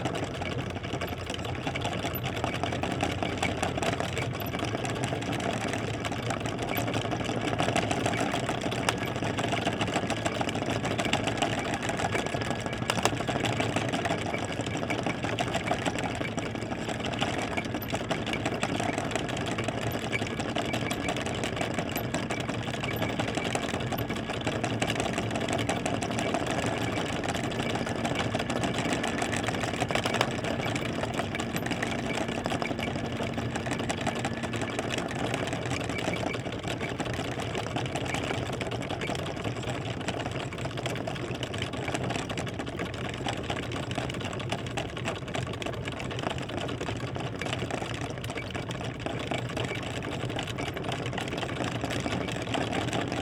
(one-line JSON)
{"title": "Tempelhofer Feld, Berlin, Deutschland - wind wheel, Almende Kontor", "date": "2012-12-28 13:30:00", "description": "wind is the protagonist on the Tempelhof field. this part, called Almende Kontor, is dedicated to an experimental urban gardening project. even on winter days people sit here and enjoy the sun and sky, or their self build wooden schrebergarten castles... an adventurous wind wheel emsemble rattles in the wind.\n(SD702, AT BP4025)", "latitude": "52.47", "longitude": "13.42", "altitude": "53", "timezone": "Europe/Berlin"}